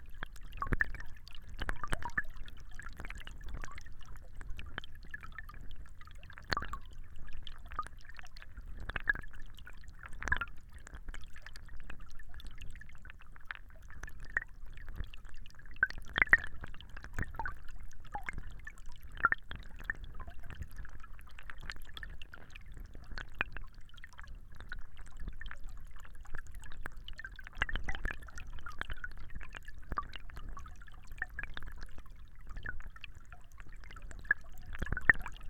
Lithuania, river Krasuona
little river Krasuona under the road. the first part is recorded with omni mics, the second part - hydrophone